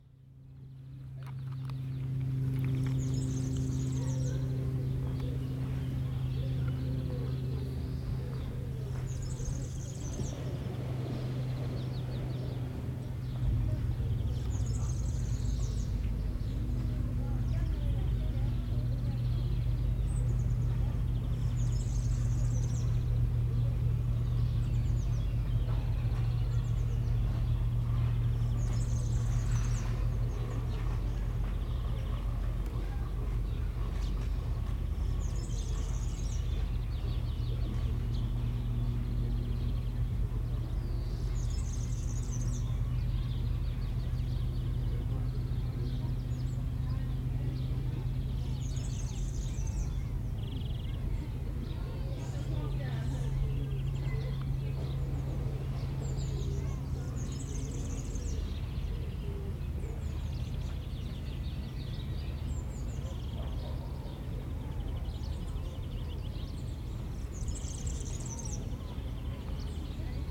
Pelouse Lamartine, Aix-les-Bains, France - Parc périurbain
Sous un résineux de la pelouse arborée, on retrouve les oiseaux caractéristiques des parcs et jardins, le bavard serin cini et le modulent verdier. Enrobage grave des bruits de moteurs, bateaux, motos, avions .... cette pelouse va servir de camping pour le festival Musilac. Elle est très fréquentée comme lieu de détente.
2 July 2022, 10:40